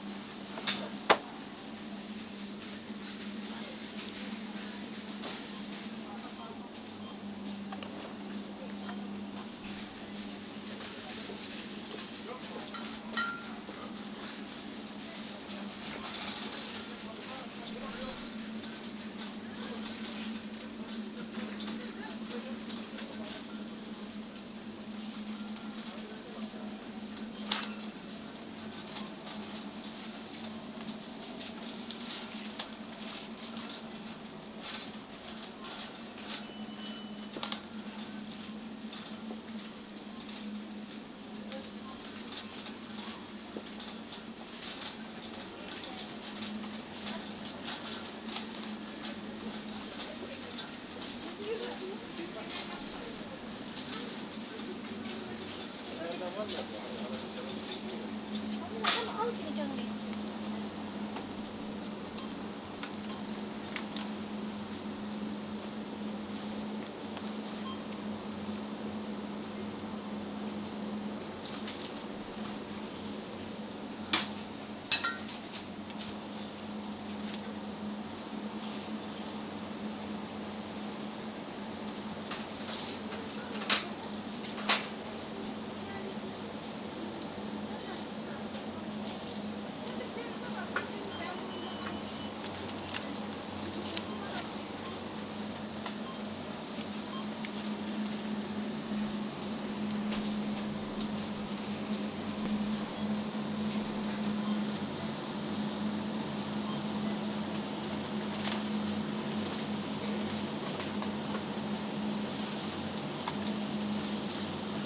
berlin, maybachufer: supermarkt - the city, the country & me: last day in the life of a supermarket
shopping in a supermarket (soundwalk)
the city, the country & me: january 7, 2012
Berlin, Germany